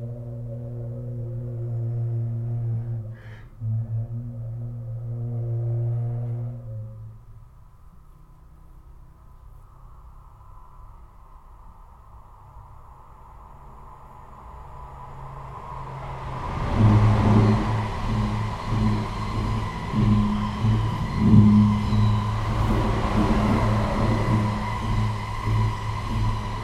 Un étroit tunnel voûté qui sert de passage piéton sous la voie ferrée, recherche de la résonance en chantant, passage d'un train.
Auvergne-Rhône-Alpes, France métropolitaine, France, 27 August 2022